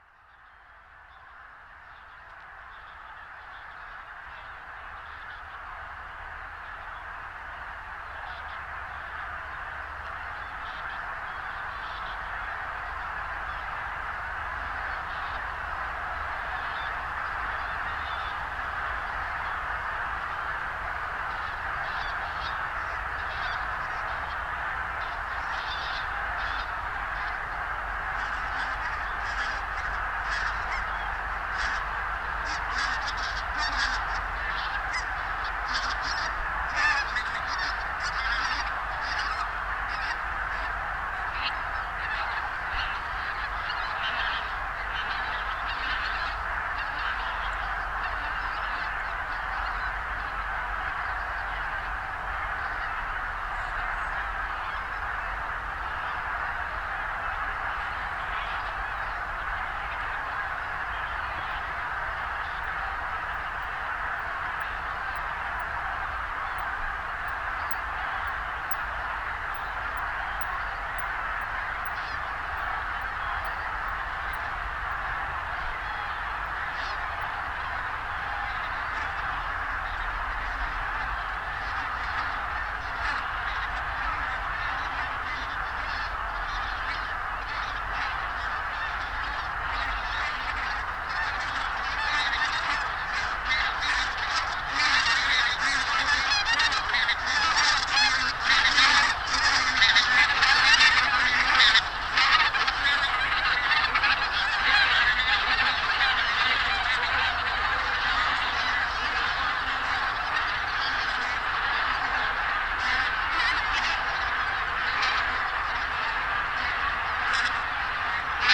Gülper See, Germany - Abertausende Zugvögel
1000 zugvögel, gänse und kraniche, sammeln sich am gülper um anfang november nach süden/westen zu ziehen / thousands of cranes and geese (goose) meeting at a lake in late autumn / migliaia di gru e oche si raggruppano a un lago in autunno